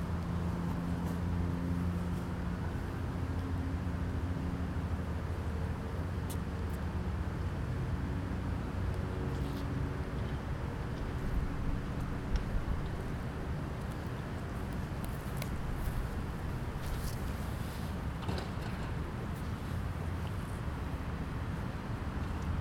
Recorded at the back entrance to the center for the arts at Muhlenberg College. Recorded at 1:50 pm using a Sony Zoom recorder.